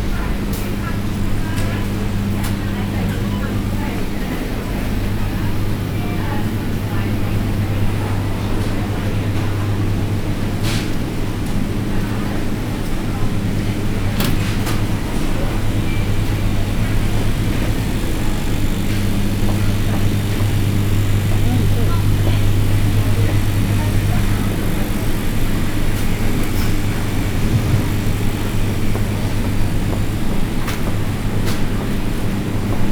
Walk through the Supermarket, Malvern, UK - Walk
Walk through a busy supermarket.
MixPre 6 II with 2 x MKH 8020s
West Midlands, England, United Kingdom, 20 March, ~12:00